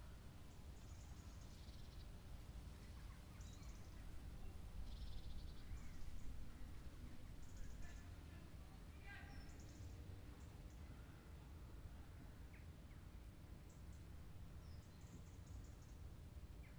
Park Side, Checkendon - Listening on the bench next to the carpark
Listening to Checkendon Exiles v Wheatley King and Queen in the Upper Thames Valley Sunday League match. I arrived a few minutes before half-time. This recording was made from the bench next to the car park. I made the recording with a Tascam DR-40.
Reading, UK, 21 October 2018